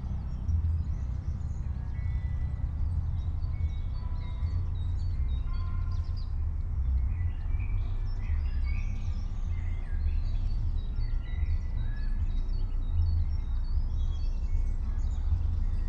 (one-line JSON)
{"date": "2022-05-01 19:16:00", "description": "19:16 Berlin, Königsheide, Teich - pond ambience", "latitude": "52.45", "longitude": "13.49", "altitude": "38", "timezone": "Europe/Berlin"}